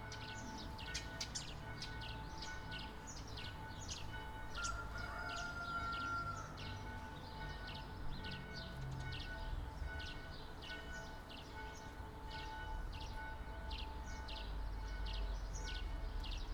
Bonaforth, village, Saturday, 12am, siren's wailing, church bell, Rode NT4, Fostex FR2
5 April 2014, 12:00